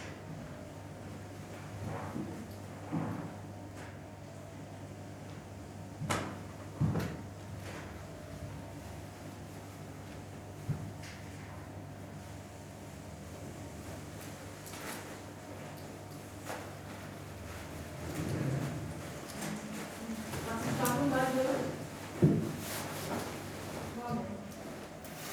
Berlin Kreuzberg Schlesische Str. - pediatrist
at the pediatrist, waiting room ambience
(tech note: olympus ls-5)
Berlin, Germany, 22 December 2011